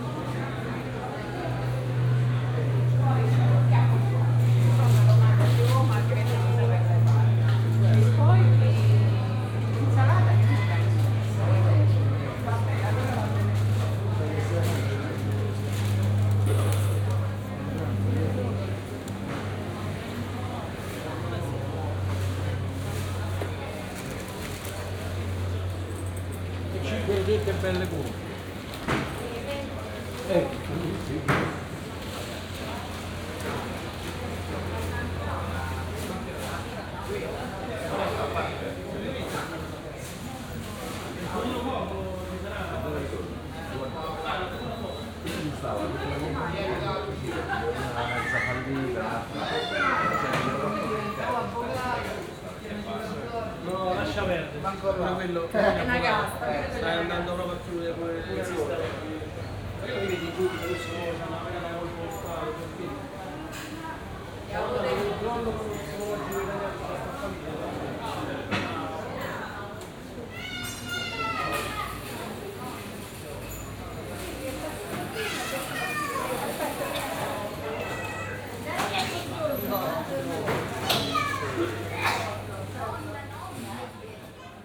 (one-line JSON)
{"title": "Rome, Marceto di Testacio - market at closing time", "date": "2014-09-01 12:17:00", "description": "walking around a market. most stalls already closed. just a few grocers offering their products.", "latitude": "41.88", "longitude": "12.47", "altitude": "18", "timezone": "Europe/Rome"}